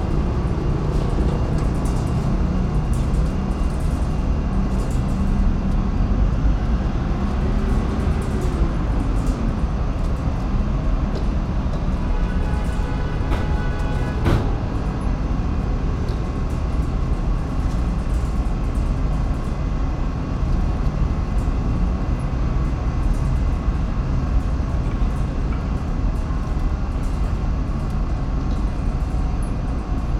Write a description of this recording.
At the tram stop, cold and windy, the wires aboves start moving one against another. PCM-M10 internal microphones.